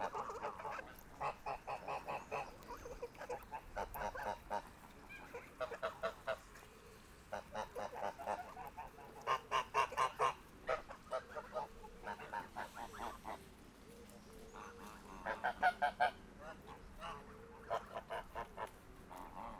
During the time I pick up beer capsules for my neighboor, who is collecting this kind of objects, a huge herd of geese is coming to see me. The birds are very angry : fshhhhhh they said !
Ottignies-Louvain-la-Neuve, Belgium